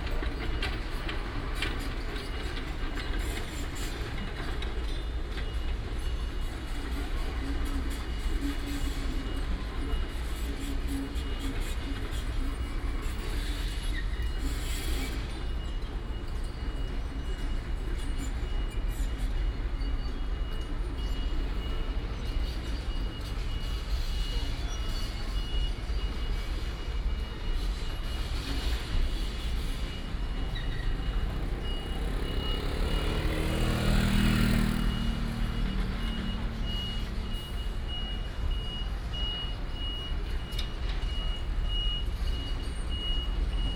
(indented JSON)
{
  "title": "立功社區, East Dist., Hsinchu City - Site construction sound",
  "date": "2017-09-27 15:42:00",
  "description": "Site construction sound, traffic sound, Next to the old community, Binaural recordings, Sony PCM D100+ Soundman OKM II",
  "latitude": "24.79",
  "longitude": "121.00",
  "altitude": "59",
  "timezone": "Asia/Taipei"
}